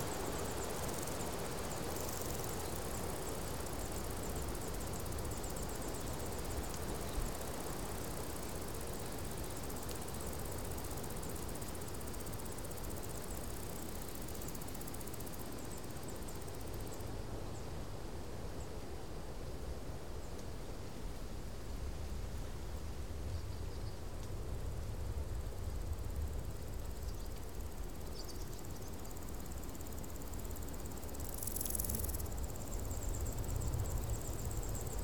hot afternoon, windy, in tree alley in between fields, insect + birds + swallows + distant dogs + wind. equip.: SD722 + Rode NT4.
Co. Meath, Ireland